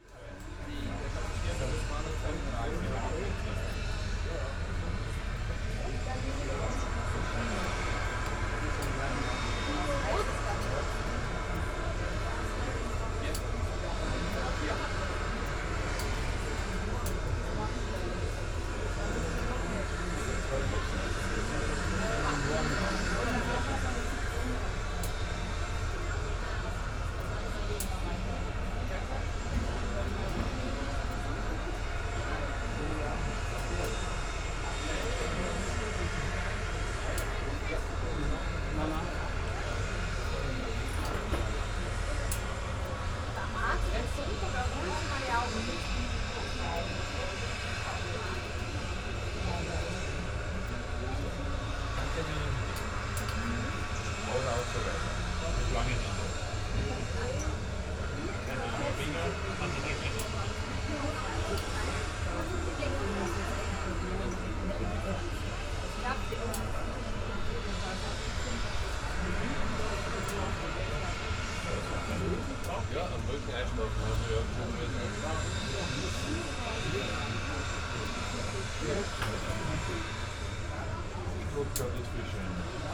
airport Graz Feldkirchen - windy terrace
airport sounds on a windy restaurant terrace.